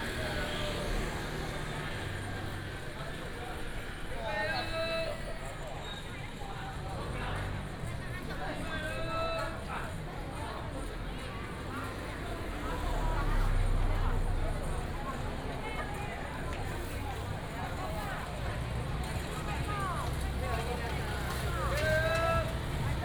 Zhongshan Rd., 苗栗縣後龍鎮 - Walking in the market
Traffic sound, Market sound